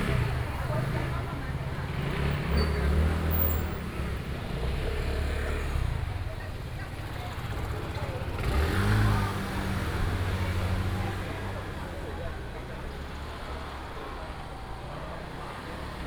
{"title": "Wenchang St., Banqiao Dist., New Taipei City - Walking through the market", "date": "2015-07-31 07:20:00", "description": "Walking through the market\nPlease turn up the volume a little. Binaural recordings, Sony PCM D100+ Soundman OKM II", "latitude": "25.01", "longitude": "121.46", "altitude": "20", "timezone": "Asia/Taipei"}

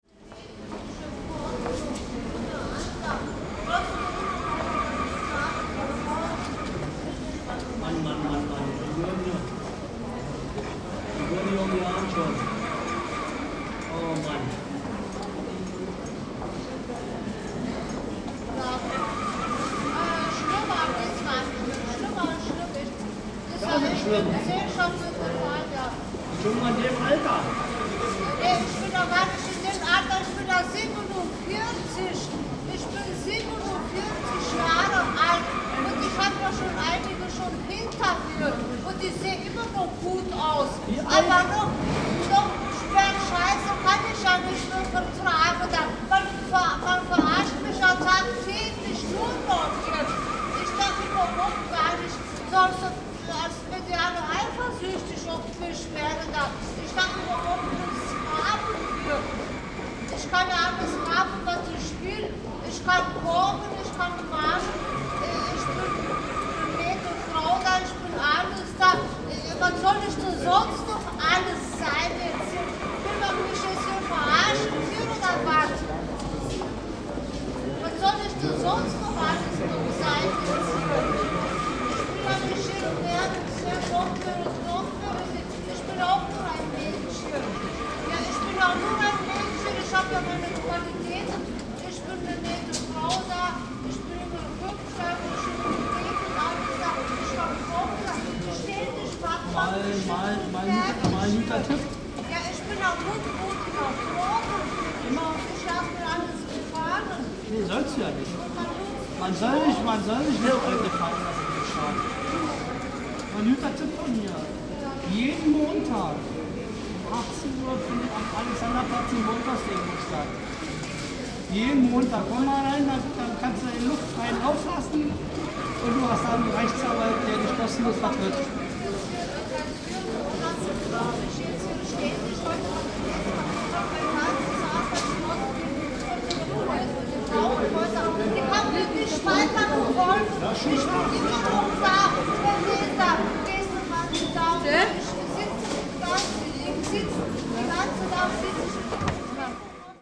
{"title": "U8 Hermannplatz - Frau beklagt sich", "date": "2008-11-17 16:50:00", "description": "U8, Hermannplatz, Berlin, 17.11.2008 16:50, Frau sitzt auf Bank neben der rotierenden Werbung, beklagt sich über ihr Leben.\n(woman sits on bench in subway station, moans about her life)", "latitude": "52.49", "longitude": "13.42", "altitude": "42", "timezone": "Europe/Berlin"}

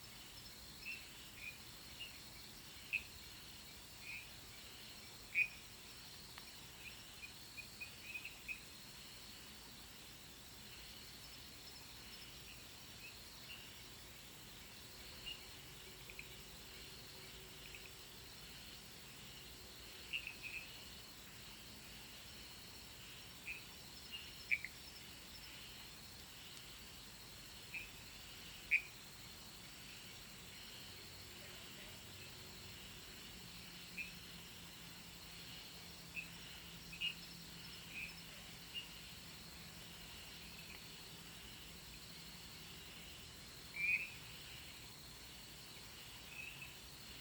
{"title": "中路坑, 桃米里 Puli Township - Sound of insects and Frogs", "date": "2016-05-17 18:53:00", "description": "Sound of insects, Frogs chirping\nZoom H2n MS+XY", "latitude": "23.95", "longitude": "120.92", "altitude": "547", "timezone": "Asia/Taipei"}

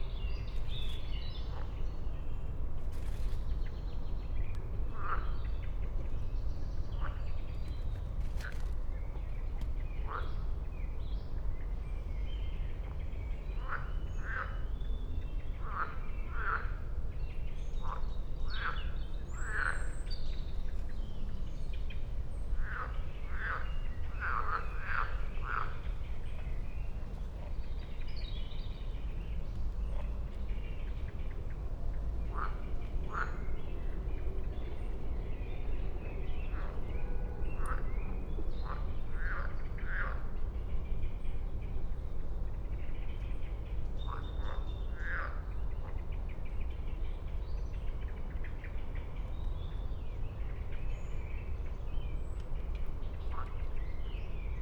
{"title": "Königsheide, Berlin - evening ambience at the pond", "date": "2020-06-02 21:30:00", "description": "evening at the Königsheide pond, distant city rumble\n(SD702, MKH8020)", "latitude": "52.45", "longitude": "13.49", "altitude": "35", "timezone": "Europe/Berlin"}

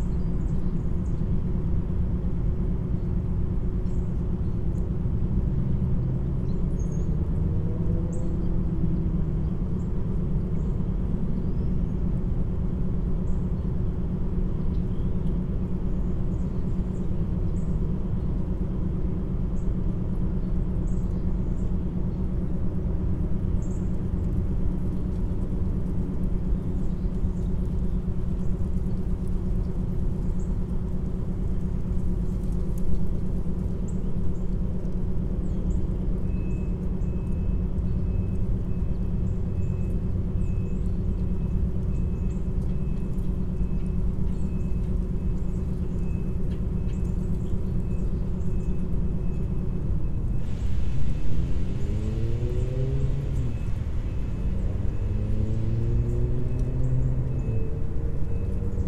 {"title": "Glover St SE, Marietta, GA, USA - Perry Parham Park - Rottenwood Creek", "date": "2021-02-17 16:12:00", "description": "Recording along a section of Rottenwood Creek that runs through Perry Parham Park. Nobody else was present in the park, probably because of the time of week. The creek is so low and calm that you can barely hear it, with only a couple of tiny trickles being audible over the sounds of the surrounding area. Lots of dry leaves are heard blowing in the wind, as well as some birds. This area is defined by a constant hum of traffic.\n[Tascam Dr-100mkiii & Primo EM272 omni mics)", "latitude": "33.94", "longitude": "-84.54", "altitude": "324", "timezone": "America/New_York"}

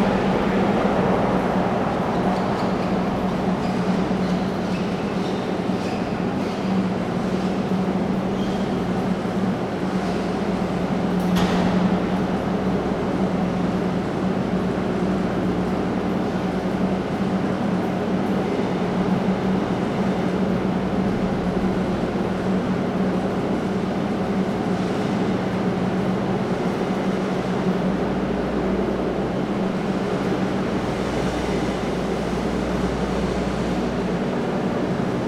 {"title": "Monte, cableway station - inside the cableway station", "date": "2015-05-01 16:30:00", "description": "drone of machinery in the cableway station. cableway cars arriving every few seconds.", "latitude": "32.68", "longitude": "-16.90", "altitude": "591", "timezone": "Atlantic/Madeira"}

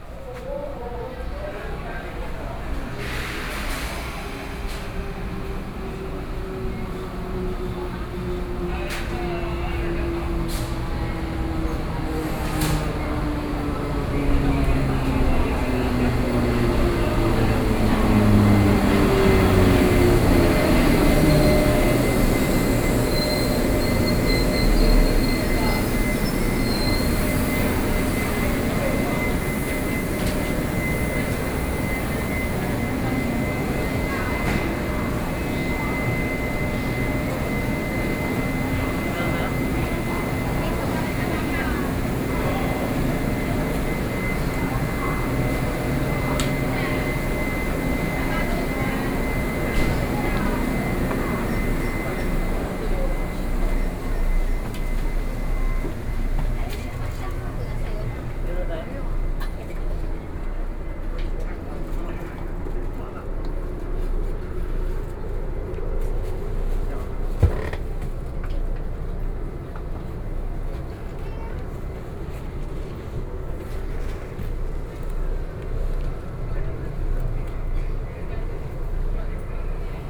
{"title": "Hsinchu Station - On the platform", "date": "2013-09-24 18:54:00", "description": "On the platform, Train arrived, Sony PCM D50 + Soundman OKM II", "latitude": "24.80", "longitude": "120.97", "altitude": "28", "timezone": "Asia/Taipei"}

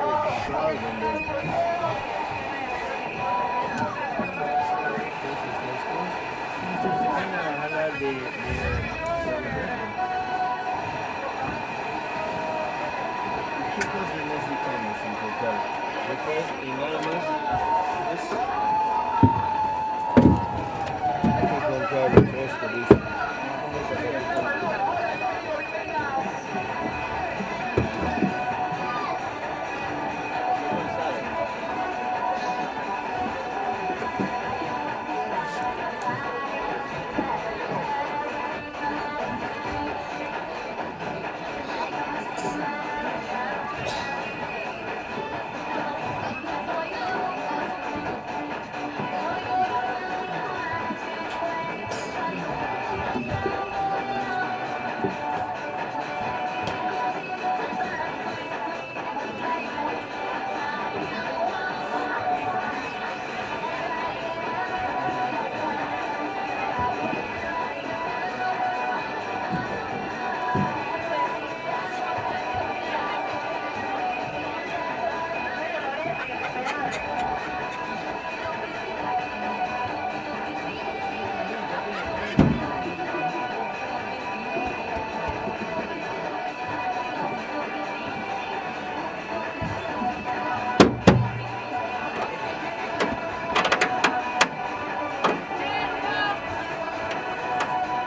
{"title": "Unnamed Road, Tamshiyacu, Peru - river side market noise Tamshiyacu", "date": "2001-02-10 17:27:00", "description": "river side market noise Tamshiyacu", "latitude": "-4.02", "longitude": "-73.15", "altitude": "93", "timezone": "America/Lima"}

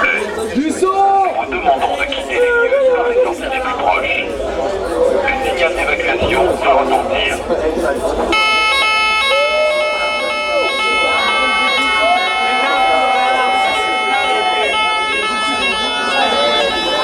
{"title": "RobotAtWork AKA#party Mains d'Oeuvres 16/12/07", "latitude": "48.91", "longitude": "2.34", "altitude": "43", "timezone": "GMT+1"}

